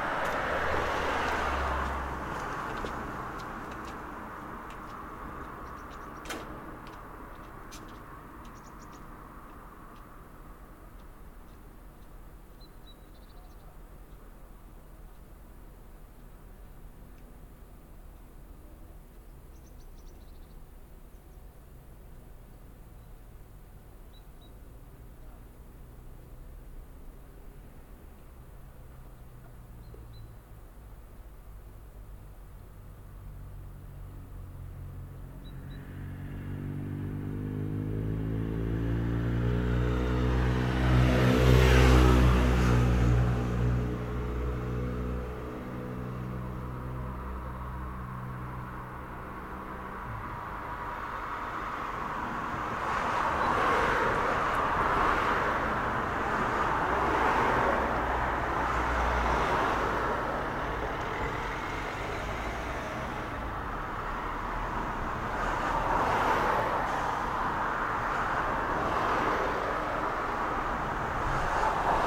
An den Steinfeldern, Wien, Österreich - An den Steinfeldern
redorded with Tascam DR-07 in the bike basket (metal-grid) of bike on the sidewalk, between car and wall from MAN-Corp.; lot of traffic in this industrial area.